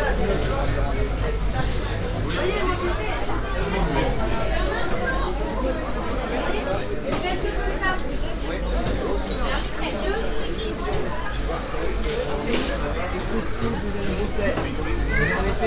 Place La Fayette, Angers, France - (595) Marché La Fayette
Binaural recording of Marché La Fayette.
recorded with Soundman OKM + Sony D100
sound posted by Katarzyna Trzeciak
France métropolitaine, France